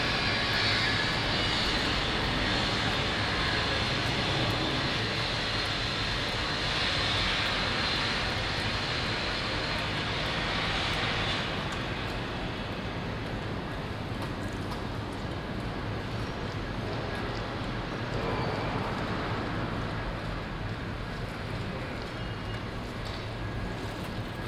Turfmarkt, Den Haag, Nederland - Construction work
Pedestrians and other traffic around a big building excavation at the Turfmarkt, Den Haag.
Binaural recording. Zoom H2 with SP-TFB-2 binaural microphones.